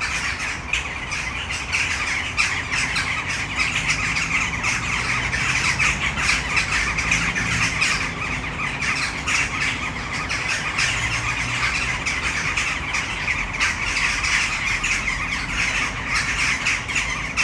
Malinovského nám., Brno-střed-Brno-město, Česko - Western jackdaws at sunset
A tree full of jackdaws in the city center of Brno.